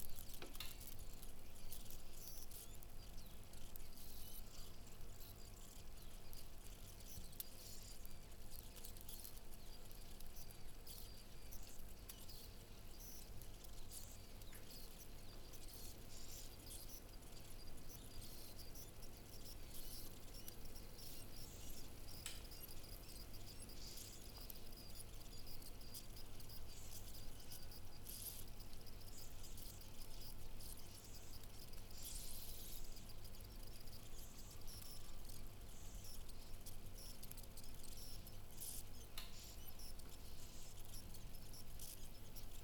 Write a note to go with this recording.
a few drops of water got under a pot, exploding and sizzling as temperature was rising. later you get to hear the most unusual sounds of the heated meal.